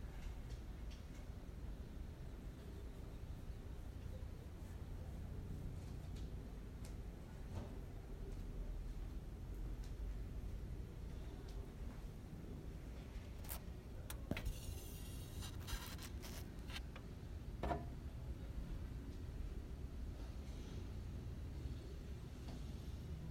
{"title": "Estr. dos Índios - Bairro do Limoeiro, Arujá - SP, 07432-575, Brasil - Paisagem Sonora para projeto interdisciplinar de captação de áudio e trilha sonora", "date": "2019-04-30 08:26:00", "description": "Áudio captado com intuito de compor um paisagem sonora de um cena sonorizada em aula.\nPor ter sido captado em Arujá uma cidade com cerca de 85.000 mil habitantes nos traz o silêncio e a calmaria de uma cidade de interior, diferente da quantidade massiva de sons presentes na cidade de São Paulo durante todo o dia, no áudio o que nós recorda que estamos em uma metrópole são os sons de aviões, e carros ao longe passando na estrada, podemos ouvir também o latido constante de um cachorro em certos momento e pessoas fazendo suas tarefas diárias.", "latitude": "-23.41", "longitude": "-46.31", "altitude": "809", "timezone": "America/Sao_Paulo"}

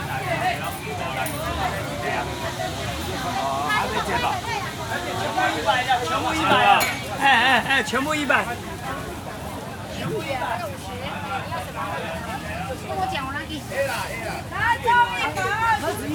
{"title": "福和橋市場, Yonghe Dist., New Taipei City - the traditional market", "date": "2011-05-21 10:31:00", "description": "Walking in the traditional market, Traffic Sound\nZoom H4n", "latitude": "25.01", "longitude": "121.53", "altitude": "11", "timezone": "Asia/Taipei"}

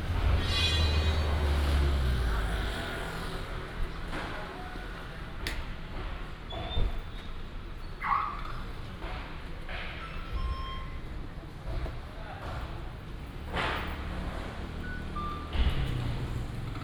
{"title": "Datong Rd., Douliu City - Traffic and construction sound", "date": "2017-03-01 09:21:00", "description": "In front of the convenience store, Traffic sound, Opposite construction beating sound", "latitude": "23.71", "longitude": "120.54", "altitude": "57", "timezone": "Asia/Taipei"}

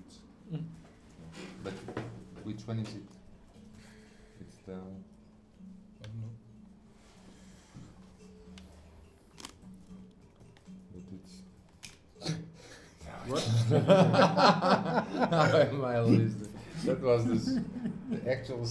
{"title": "Lisboa, RadiaLX radio festival - soundcheck", "date": "2010-06-30 23:40:00", "description": "third edition of RadiaLx, Portugal's unique festival dedicated to radio art, an International Radio Art Festival happening in Lisboa.\nsoundcheck for radialx stream launch at midnight, at the secret headquarter...", "latitude": "38.75", "longitude": "-9.13", "altitude": "74", "timezone": "Europe/Lisbon"}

